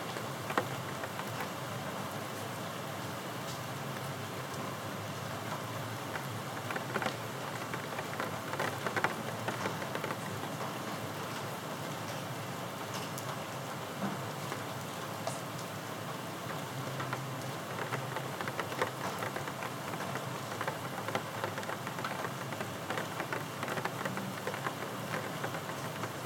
Ave, Ridgewood, NY, USA - Gentle rain, Ridgewood
New York, USA